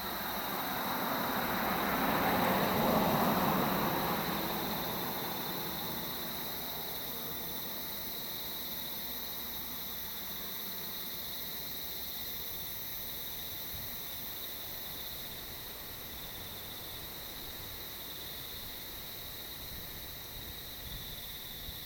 南迴公路453.4K, Daren Township - sound of cicadas
Mountain road, traffic sound, sound of cicadas, wind
Zoom H2N MS+ XY
Taitung County, Taiwan, 24 April 2018, 09:00